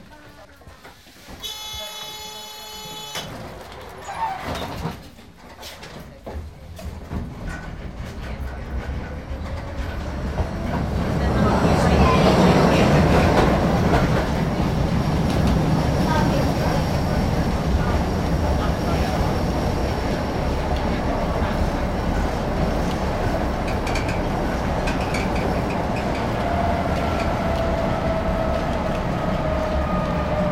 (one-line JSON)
{"title": "budapest, inside a city tram", "description": "inside a city tram, constantly run with some stops and background conversations\ninternational city scapes and social ambiences", "latitude": "47.51", "longitude": "19.05", "altitude": "108", "timezone": "Europe/Berlin"}